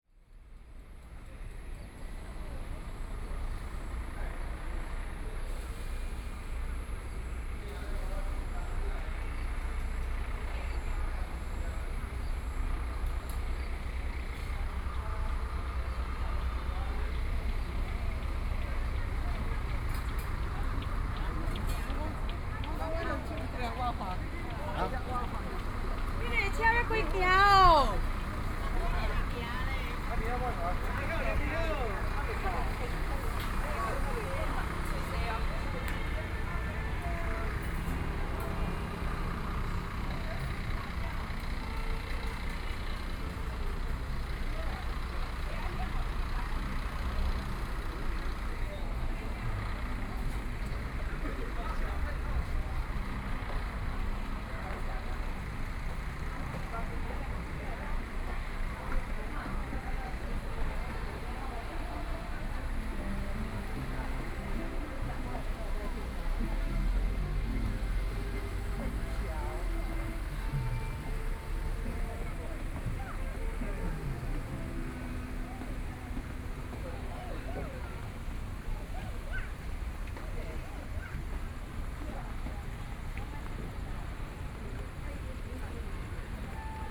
湯圍溝溫泉公園, Jiaosi Township - Hot Springs Park
walking in the Hot Springs Park
Sony PCM D50+ Soundman OKM II
21 July 2014, 19:25